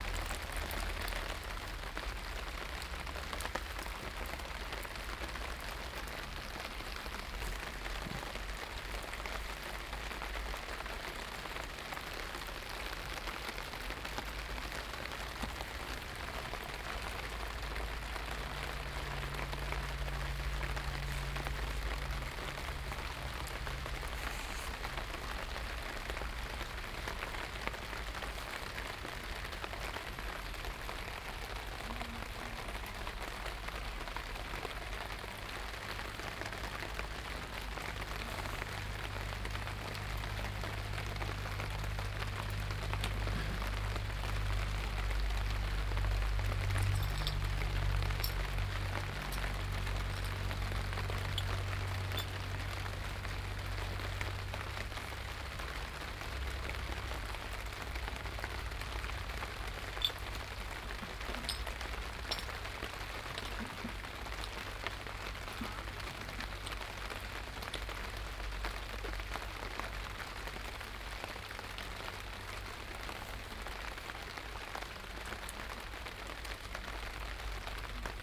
berlin, schwarzer kanal, rain - berlin, schwarzer kanal, rain
binaural recording, regen auf abdeckplane, kronkorken, arbeitende menschen
4 August 2011, ~16:00